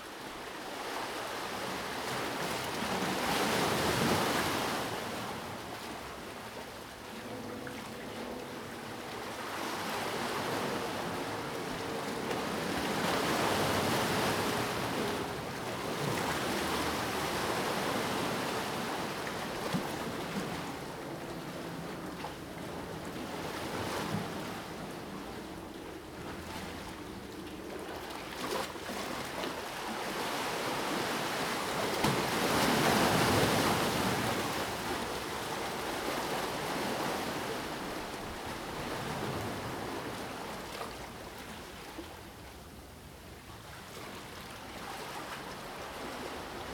{"title": "Le Port, Nice, France - waves breaking on rocks", "date": "2014-03-06 14:12:00", "description": "Next to the port wall are hundreds of huge concrete blocks which are designed to break up the waves. You can climb around these blocks and get right next to the water, and that is where the recording was taken.\nZOOM H1", "latitude": "43.69", "longitude": "7.29", "timezone": "Europe/Paris"}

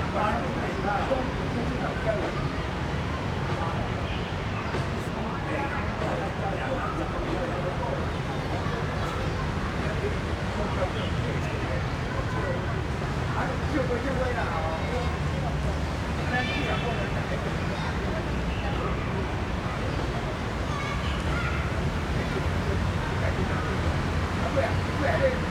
{"title": "Zhongxiao Rd., Sanchong Dist., New Taipei City - in the Park", "date": "2012-03-15 16:45:00", "description": "in the Park, Traffic Noise, Aircraft flying through\nRode NT4+Zoom H4n", "latitude": "25.07", "longitude": "121.49", "altitude": "8", "timezone": "Asia/Taipei"}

